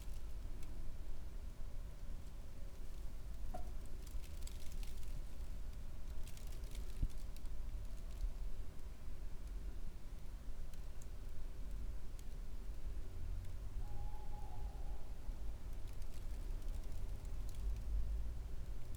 night birds, light snowflakes on paper, low traffic and gas furnace sounds
Slovenia, 12 February 2013, 00:17